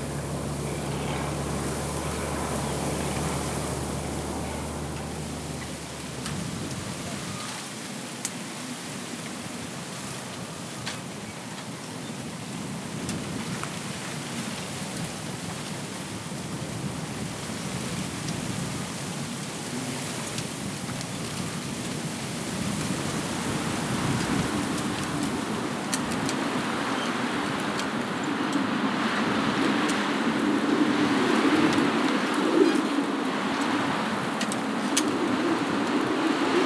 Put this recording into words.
MS stereo recording of a mix of standard for the season, strong northern winds. I wasn't prepared for such conditions, thus this mix, as many attempts resulted in unusable recordings. ZoomH2n